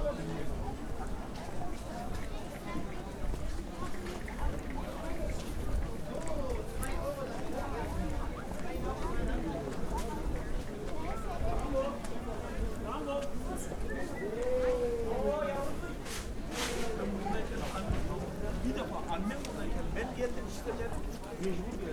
berlin, maybachufer: wochenmarkt - the city, the country & me: market day
a walk around the market
the city, the country & me: november 19, 2010